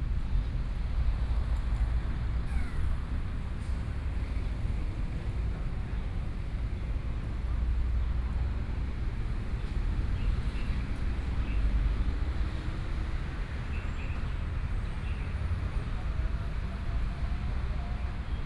高雄公園, Kaohsiung City - Walking through the park
Walking through the park, Aircraft flying through, Traffic Sound
Kaohsiung City, Taiwan